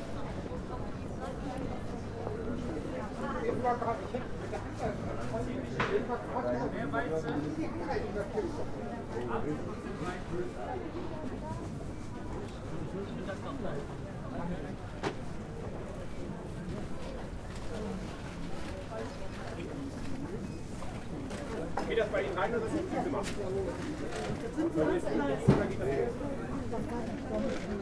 weekly market, soundwalk
the city, the country & me: november 9, 2013